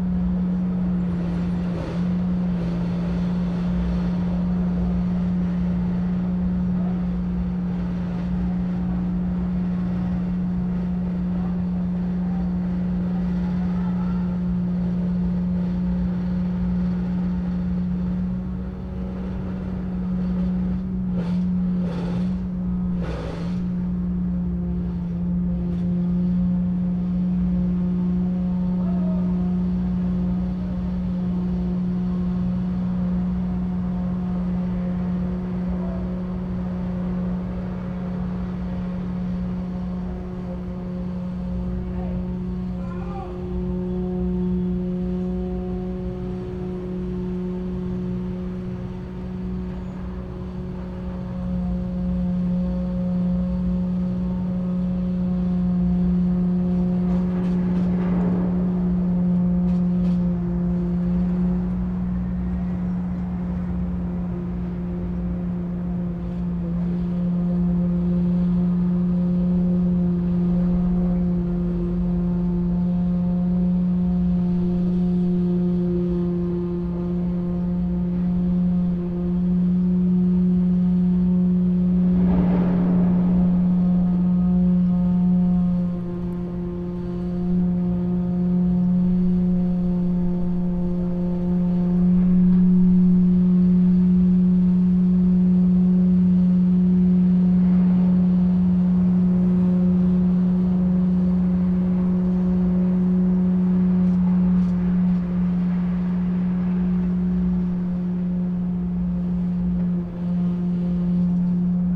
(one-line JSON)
{"title": "berlin, grenzallee: gerüstbauunternehmen - A100 - bauabschnitt 16 / federal motorway 100 - construction section 16: scaffolding company", "date": "2013-07-19 14:13:00", "description": "construction works\nthe motorway will pass about 100 m east of this territory and will connect the existing motorway 100 about 100 m south of this place\nthe federal motorway 100 connects now the districts berlin mitte, charlottenburg-wilmersdorf, tempelhof-schöneberg and neukölln. the new section 16 shall link interchange neukölln with treptow and later with friedrichshain (section 17). the widening began in 2013 (originally planned for 2011) and shall be finished in 2017.\nsonic exploration of areas affected by the planned federal motorway a100, berlin.\njuly 19, 2013", "latitude": "52.47", "longitude": "13.46", "altitude": "35", "timezone": "Europe/Berlin"}